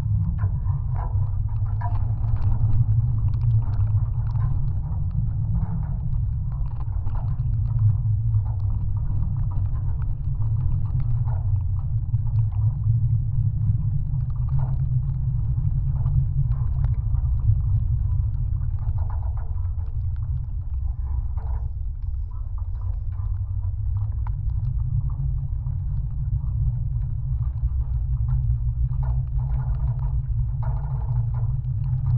Zalvaris Park, Lithuania, support wires
contact mics on some watchtower's support wires. wind and drizzle
17 March, ~16:00